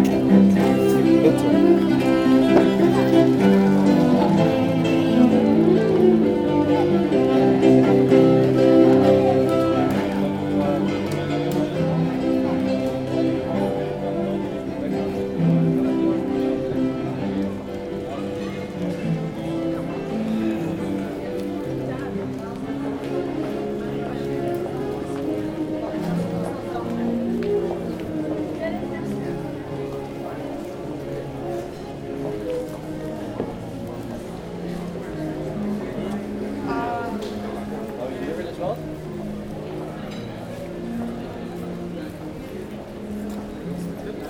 Antwerpen, Belgique - Street music players
Two music players in the street, one with a keyboard, a second one with a cello. It's summer time in Antwerp.